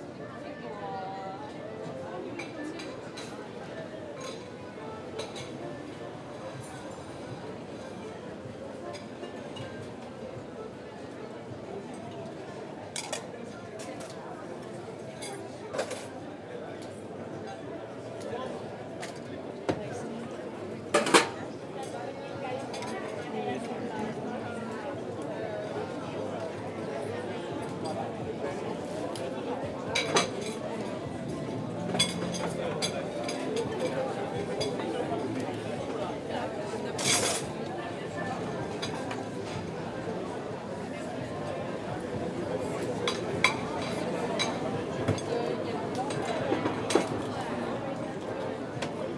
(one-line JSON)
{"title": "Bruxelles, Belgium - Sainte-Catherine district", "date": "2018-08-25 14:50:00", "description": "The very lively area of the Sainte-Catherine district in Brussels. In first, gypsies playing accordion near the restaurant terraces. After, the Nordzee / Mer du Nord restaurant, where a lot of people eat mussels and white wine. There's so much people that the salespersons shout and call the clients. This day everybody is happy here !", "latitude": "50.85", "longitude": "4.35", "altitude": "18", "timezone": "GMT+1"}